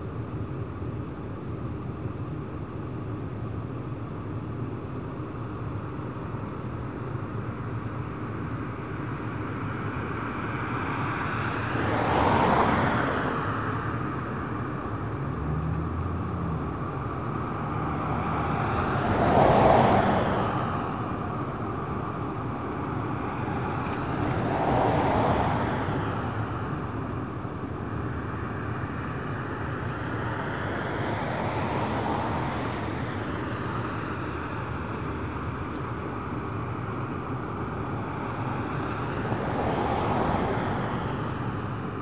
Daytime recording of the Springdale Rd Bridge. Mic facing East (away from road). I was surprised how busy it was at this time of day. I also didn't notice the humming pitch until I listened back.
Texas, United States of America, 4 November, 12:30